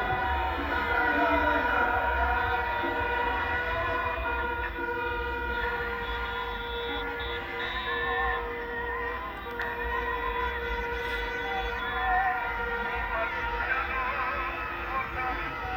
Réunion - 20200624 20h15 ambiance les élections
Cortèges de voitures pour soutenir un candidat aux municipales CILAOS
Saint-Pierre, La Réunion, France, 24 June 2020, ~20:00